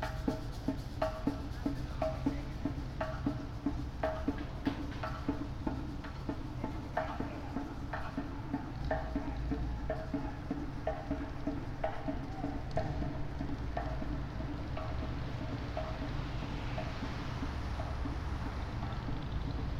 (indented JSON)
{
  "title": "Wholesale District, Indianapolis, IN, USA - Indy Street Percussionist",
  "date": "2015-04-29 21:33:00",
  "description": "Binaural recording of street performer playing percussion in downtown Indianapolis. April 29, 2015\nSony PCM-M10, MM BSM-8, Audacity (normalized and fades)",
  "latitude": "39.77",
  "longitude": "-86.16",
  "altitude": "236",
  "timezone": "America/Indiana/Indianapolis"
}